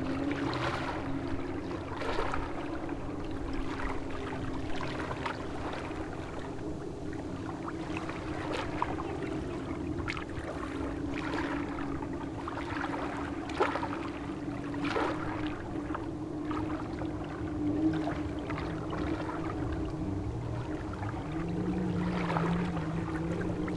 {"title": "Molėtai, Lithuania, lake Bebrusai, between two pontoons", "date": "2012-06-30 17:35:00", "latitude": "55.20", "longitude": "25.47", "altitude": "145", "timezone": "GMT+1"}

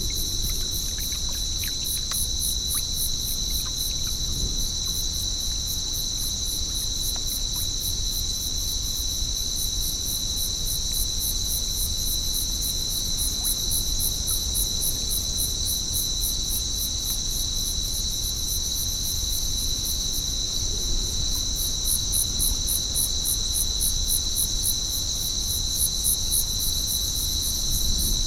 {"title": "Downe, NJ, USA - turkey point", "date": "2016-08-06", "description": "Midnight on Turkey Point. Salt marsh. The trickling sound is hundreds of small fish jumping from the marsh stream's surface. An unheard Perseid meteor streaked overhead.", "latitude": "39.25", "longitude": "-75.13", "timezone": "America/New_York"}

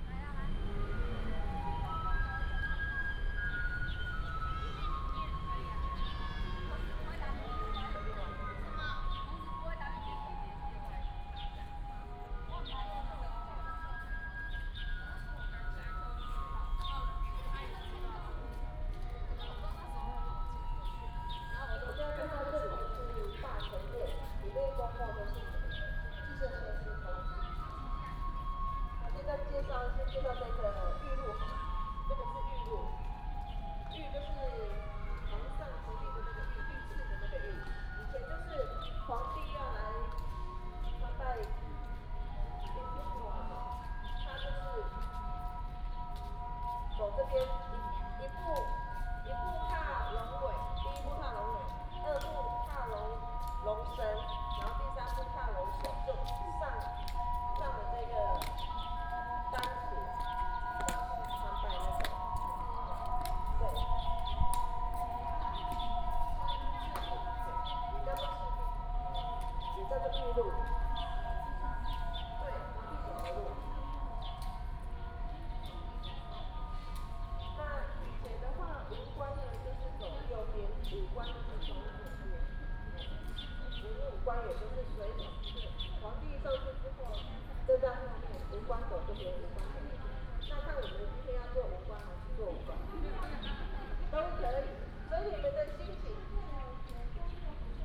{"title": "Temple of Confucius, Changhua City - Inside the temple", "date": "2017-03-18 14:47:00", "description": "Inside the temple, Bird call, Traffic sound, Navigation", "latitude": "24.08", "longitude": "120.54", "altitude": "25", "timezone": "Asia/Taipei"}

